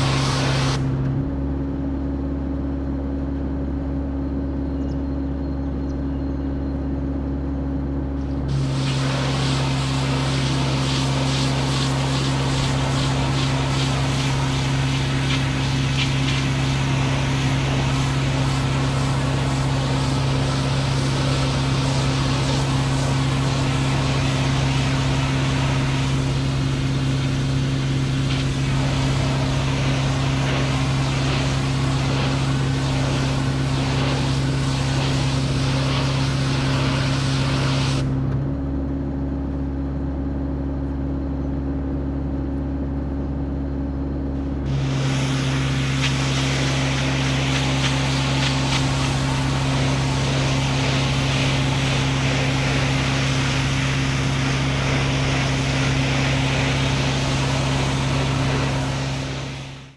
Tallinn, Niguliste
workers cleaning a monument with water pressure cleaner
19 April, 10:00